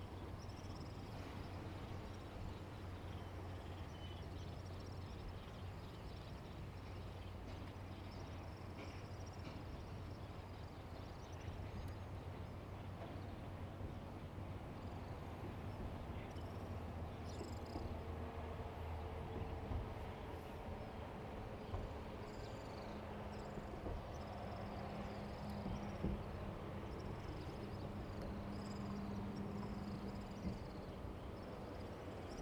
金崙村, Taimali Township - Birdsong
Birdsong, In embankment, The distant sound of embankment construction, Train traveling through
Zoom H2n MS +XY
5 September, ~18:00